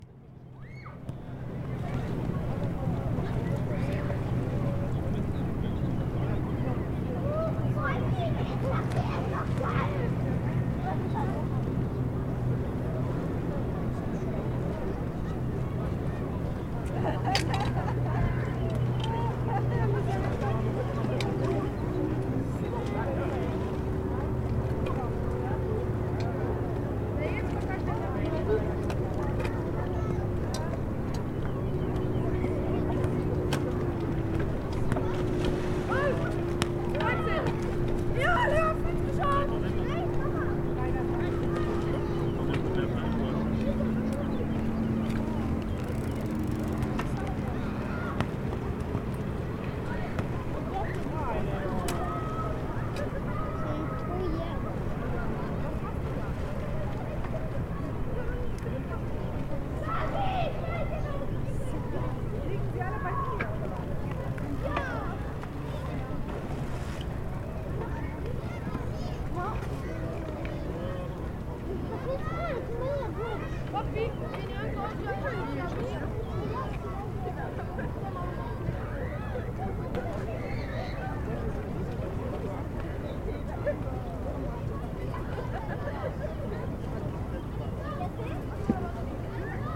plage municipale, Aix-les-Bains, France - ambiance de plage.
Face au lac ambiance de plage avec bande cyclable en béton.